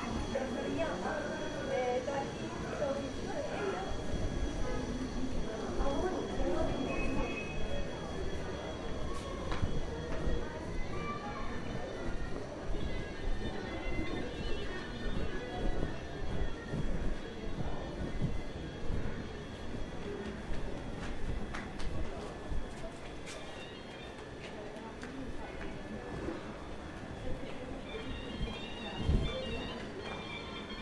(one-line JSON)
{"title": ":jaramanah: :mazin in the streets I: - twentythree", "date": "2008-10-18 07:29:00", "latitude": "33.49", "longitude": "36.33", "altitude": "673", "timezone": "Asia/Damascus"}